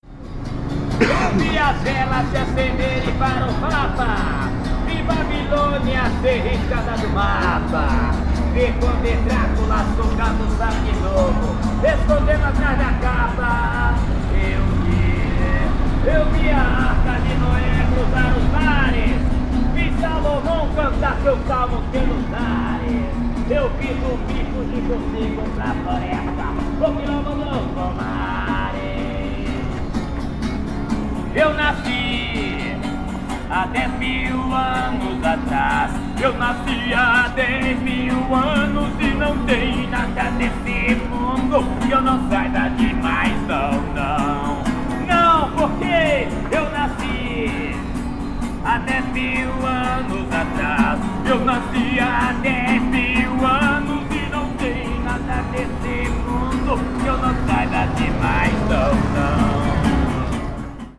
Musico Raul - Centro, Londrina - PR, Brasil - Calçadão: músico de rua (Raul Seixas)
Panorama sonoro gravado no Calçadão de Londrina, Paraná.
Categoria de som predominante: antropofonia (músicos de rua, veículos e vozes).
Condições do tempo: ensolarado, vento, frio.
Data: 23/05/2016.
Hora de início: 16h35.
Equipamento: Tascam DR-05.
Classificação dos sons
Antropofonia:
Sons Humanos: Sons da Voz; Canto; Sons do Corpo; Tosse;
Sons da Sociedade: Músicas; Instrumentos Musicais; Músico de Rua.
Sons Mecânicos: Máquina de Combustão Interna; Automóveis.
Sound panorama recorded at the Calçadão in Londrina, Paraná.
Predominant sound category: antropophony (street musicians, vehicles and voices).
Weather conditions: sunny, wind, cold.
Date: 05/23/2016.
Start time: 4:35 p.m.
Hardware: Tascam DR-05.
Human Sounds: Sounds of the Voice; Corner; Sounds of the Body; Cough;
Sounds of Society: Music; Musical instruments; Street musician.
Londrina - PR, Brazil